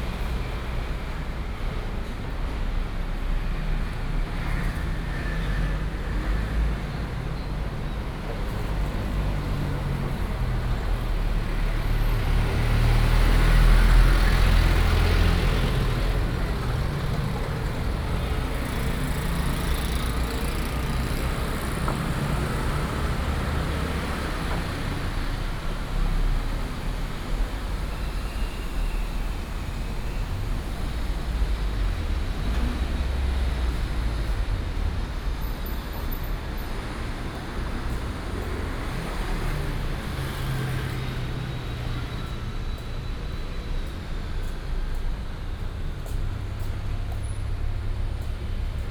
Yangming St., Banqiao Dist., New Taipei City - walking in the Street
walking in the Street, Footsteps and Traffic Sound
Banqiao District, New Taipei City, Taiwan, 29 July 2015, ~16:00